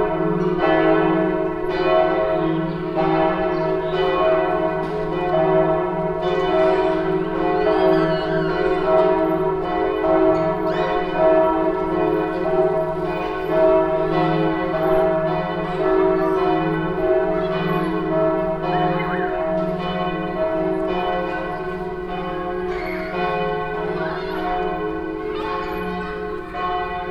dortmund, schwarze brüder street, church bells
church bells of the probstei church in the evening followed by sounds of children playing on the nearby city playground
soundmap nrw - social ambiences and topographic field recordings